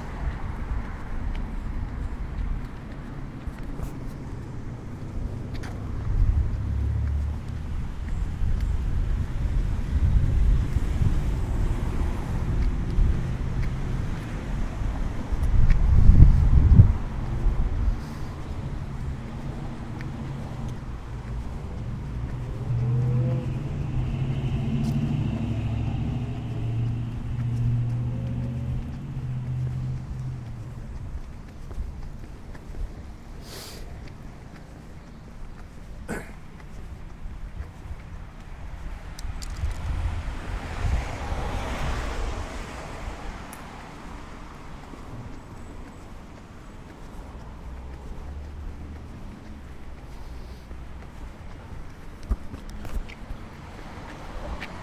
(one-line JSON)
{"title": "UCLA Bruin Walk", "description": "Walking from Veteran Ave. to UCLAs Schoenberg Music Building.", "latitude": "34.07", "longitude": "-118.44", "altitude": "120", "timezone": "Europe/Berlin"}